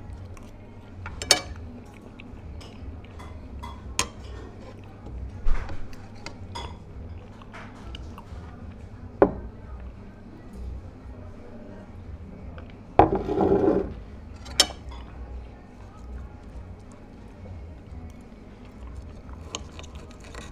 Inside the cafeteria in Baltimarket(Baltijaam). Sounds of myself and other customers eating. (jaak sova)
Tallinn, Estonia